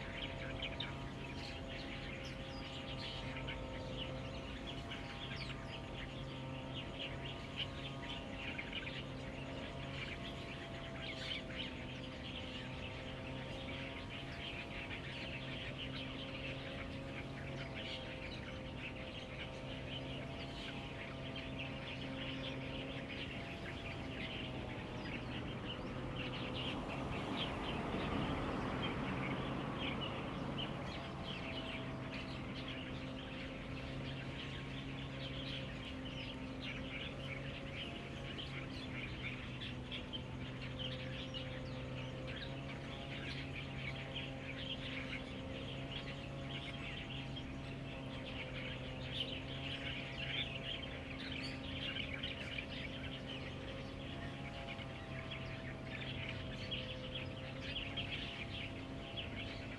Sec., Shanxi Rd., Taitung City - Birdsong
Lawn mower, The weather is very hot, Birdsong
Zoom H2n MS +XY
Taitung City, 博物館路10號, September 2014